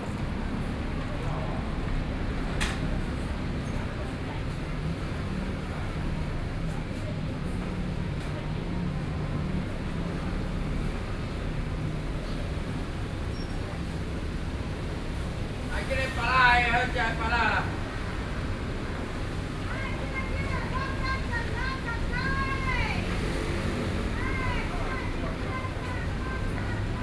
Zhonghua St., Luzhou Dist., New Taipei City - Selling sound
In front the fruit shop, Selling sound, Traffic Noise, Binaural recordings, Sony PCM D50 + Soundman OKM II